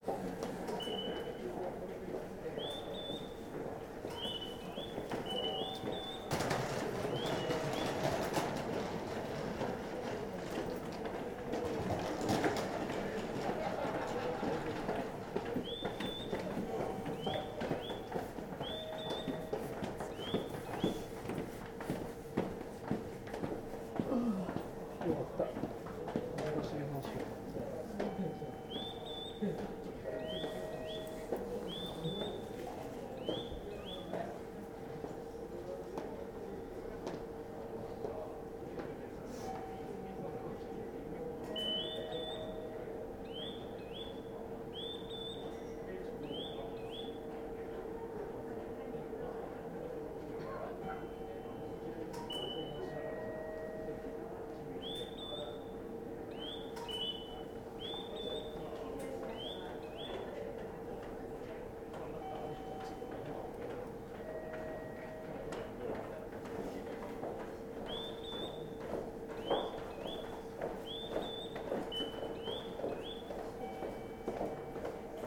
I was in the station when I heard this amazing bird sound; it was not familiar to me, so I walked around, trying to tune in and listen more. I found a place where the noise seemed louder, and listened for a while before realising the sound was strangely repetitive... I looked up to discover that there was a speaker above my head playing the sound. Not sure what the original bird call is, nor why it is playing in the Komaba Todai-mae station, but I thought this was an interesting feature of the Tokyo soundscape.
3 February 2017, 14:40